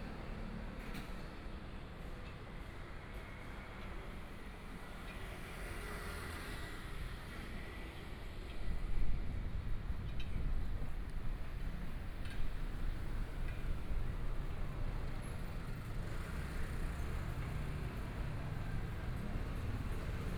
Tianjin St., Zhongzheng Dist. - walking in the Street
soundwalk, Traffic Sound, from Chang'an E. Rd. to Nanjing E. Rd., Binaural recordings, Zoom H4n+ Soundman OKM II
Zhongshan District, Taipei City, Taiwan, 20 January 2014